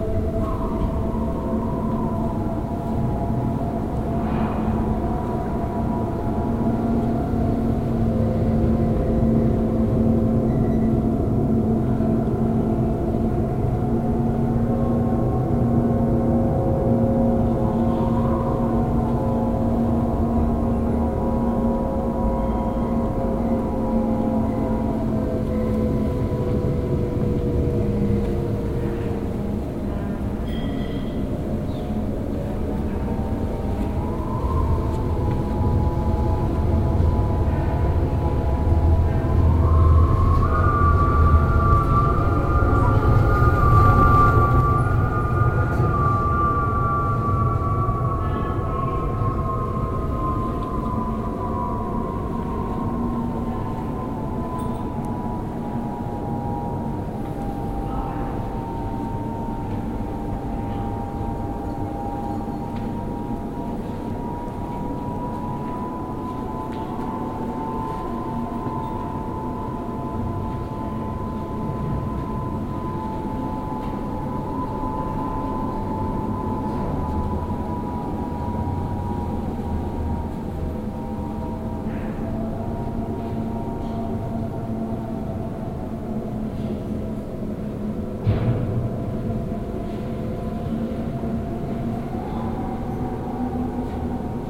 KulturpalastKlang / culture palace, Warschau / Warsaw - Wind im Palast / wind in palace
23.04.2007 23:49:39 Windgeräusche in den Fahrstuhlschächten, Kulturpalast Warschau / wind sounds in elevator tubes, cultur palace warsaw